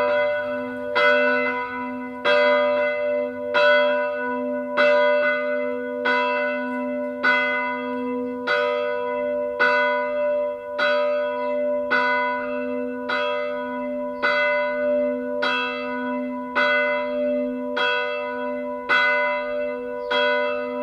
Ven. de la Geôle, Sauveterre-la-Lémance, France - Bells at 12.00 – Cloches de midi.
Insectes, oiseaux (hirondelles et pigeons) voitures distantes, cloches.
Insects, birds (sparrows and pigeons) distant cars, bells.
Tech Note : SP-TFB-2 binaural microphones → Sony PCM-M10, listen with headphones.